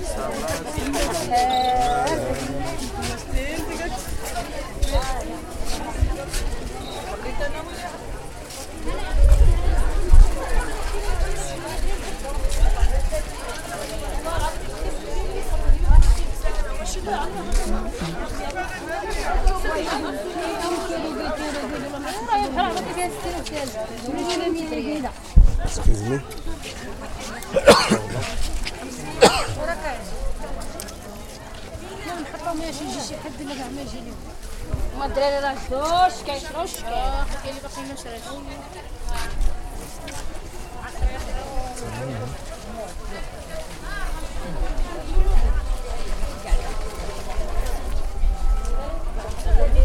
Sounds from the former slave market in 2004
Marrakesh, Morocco